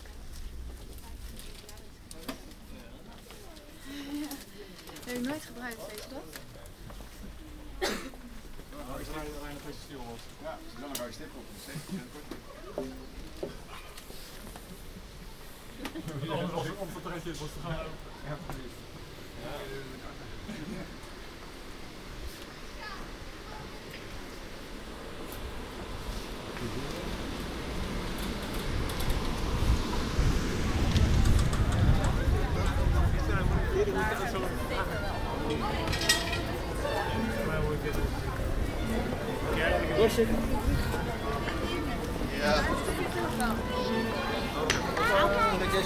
A walk through the city - A walk through the city The Hague (part 7)
13 January